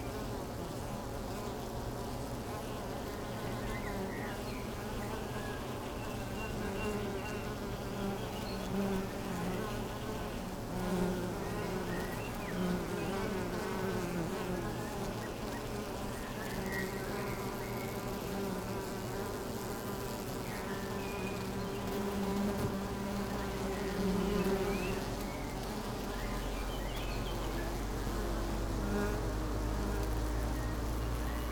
Beselich, Deutschland - bee hive
Beselich, Niedertiefenbach, Ton, former clay pit, bee hive
(Sony PCM D50)